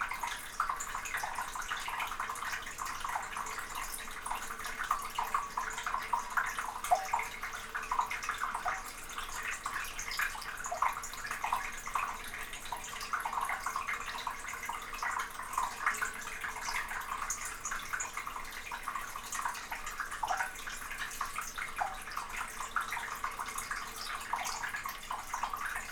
Povoa Das Leiras, Portugal, waterpipe - waterpipe
stereo microphone inside a concrete waterpipe, world listening day, recorded together with Ginte Zulyte
18 July 2012, 14:45